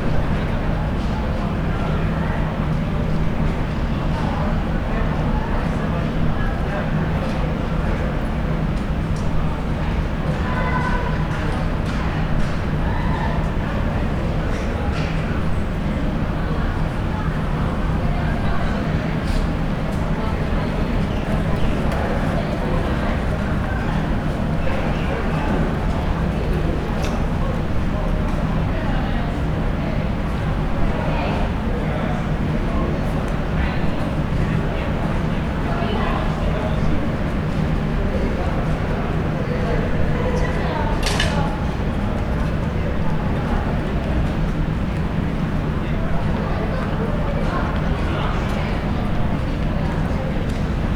neoscenes: south entrance lecture hall
6 May, 10:31